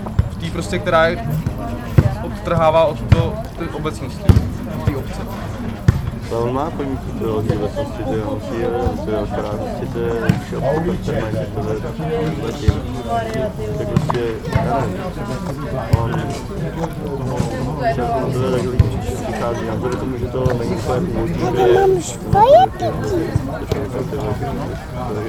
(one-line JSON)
{"title": "Pub U Budyho, Libensky ostrov", "date": "2011-09-25 18:27:00", "description": "open air pub in the middle of small garden colony.", "latitude": "50.11", "longitude": "14.46", "timezone": "Europe/Prague"}